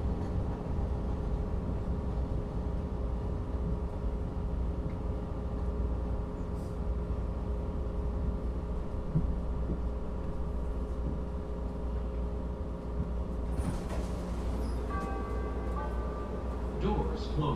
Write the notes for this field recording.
Part of my morning commute on a Blue Line train beginning underground at Belmont CTA station, through the above-ground, elevated station at Western. Tascam DR-40.